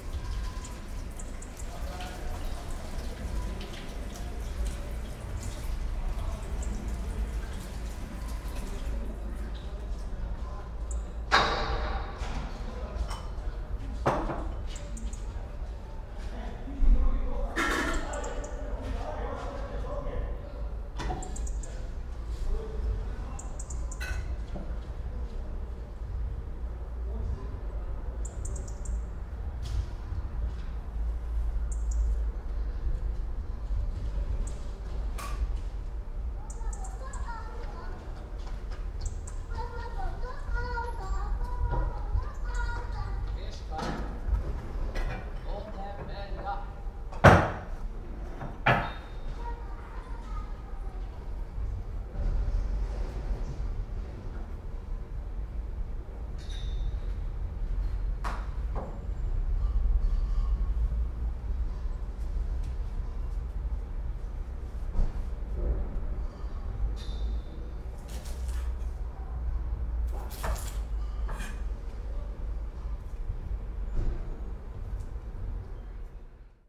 preparing food and repairing roof
Maribor, Slovenia